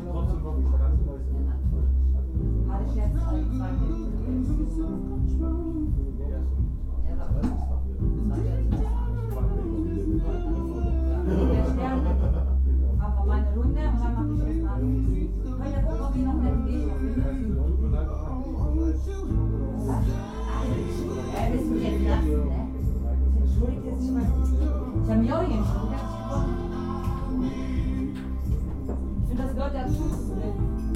Mülheim an der Ruhr, Deutschland - zum landsknecht
zum landsknecht, mülheim/ruhr